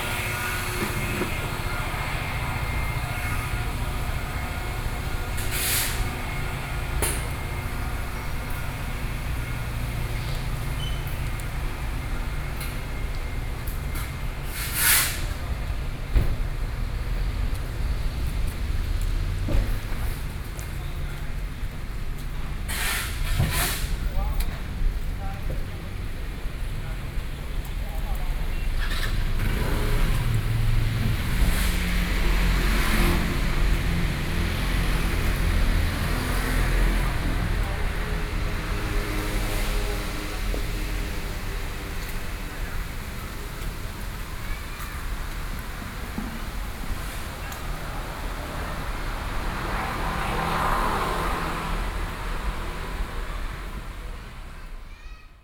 Ln., Sec., Nanjing E. Rd., Songshan Dist. - Traditional markets
Taipei City, Taiwan, 29 October